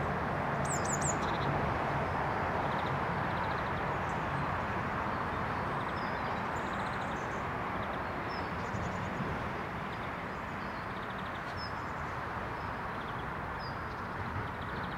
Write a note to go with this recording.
The Drive High Street Little Moor Highbury, A secret pond, an island bench, tucked behind a hazel coppice, Bee hives, quiet in the chill morning, Against the traffic, the birds keep in contact, but little song